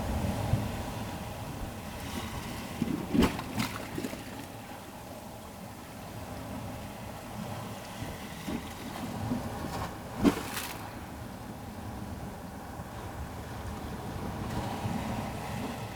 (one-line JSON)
{"title": "Whitby, UK - Rising tide ...", "date": "2016-11-25 10:00:00", "description": "Incoming tide ... open lavaliers on t bar fastened to fishing landing net pole ...", "latitude": "54.49", "longitude": "-0.61", "altitude": "1", "timezone": "GMT+1"}